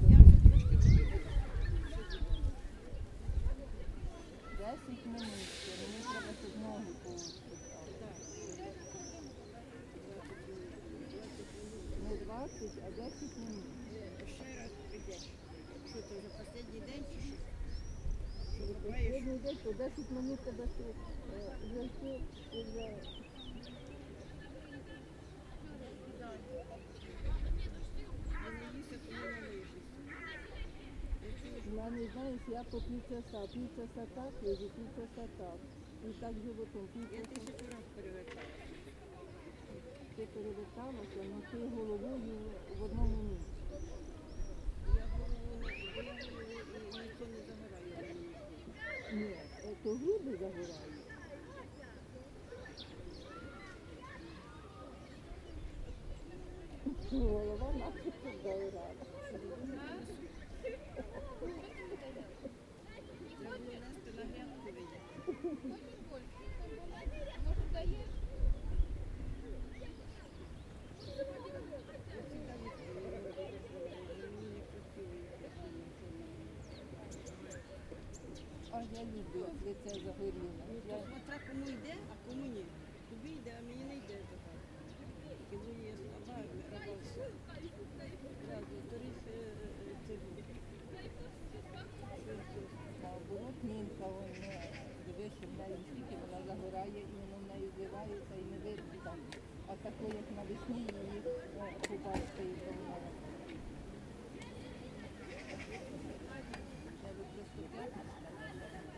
вулиця Гонти, Вінниця, Вінницька область, Україна - Alley12,7sound3thecenterofthebeach
Ukraine / Vinnytsia / project Alley 12,7 / sound #3 / the center of the beach